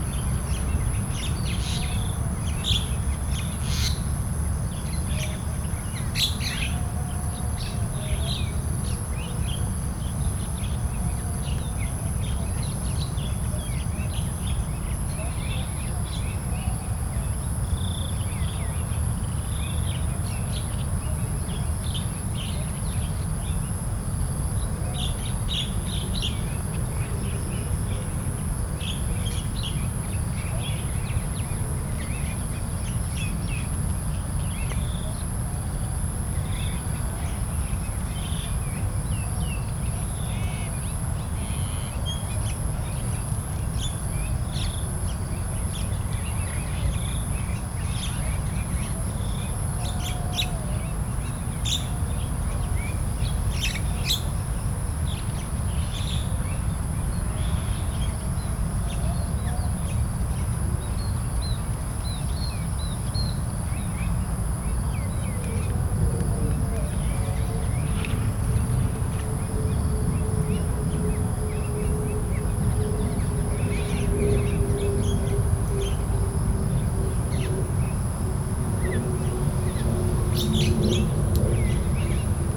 Jalan Puteri Hang Li Poh, Kampung Bukit China, Melaka, Malaysia - Evening in Bukit Cina
The recording is set in an old cemetery and the recorder is facing the many trees while the birds is making sounds. Lots of mosquitoes.
13 October, 18:53